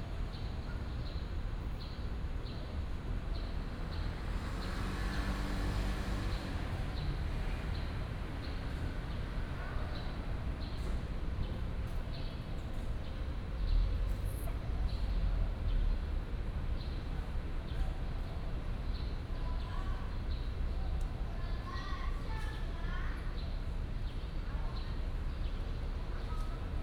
誠安公園, Da'an Dist., Taipei City - in the Park
Hot weather, Bird calls
Taipei City, Taiwan, June 2015